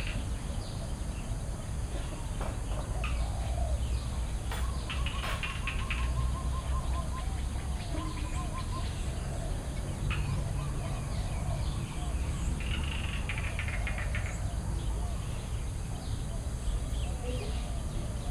Tambon Hang Dong, Amphoe Hot, Chang Wat Chiang Mai, Thailand - Fahrender Händler Chom Thong bei Puh Anna
A pedler driving by in some distance from Puh Annas guesthouse, resonating nicely in the natural sounds of the surrounding.
21 August 2017